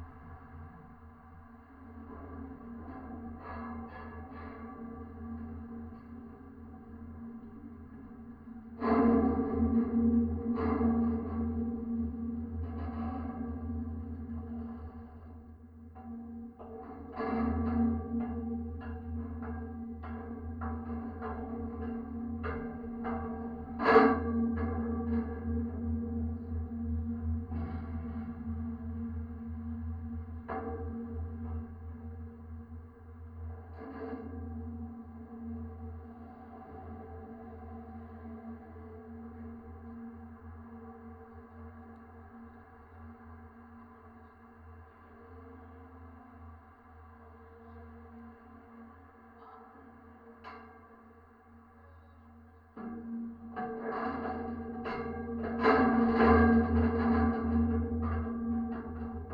Athen, Piräus, Stadio - pedestrian bridge
metal pedestrian bridge from tram to metro station, steps
(Sony PCM D50, DIY contact mics)
Pireas, Greece, 8 April, 19:50